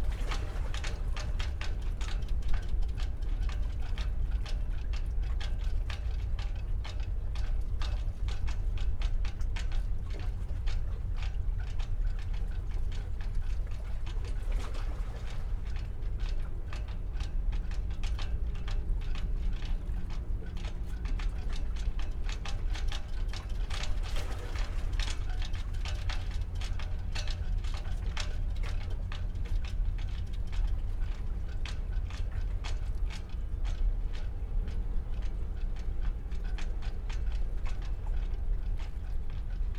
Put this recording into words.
rig of a small boat ringing in the wind, (SD702, DPA4060)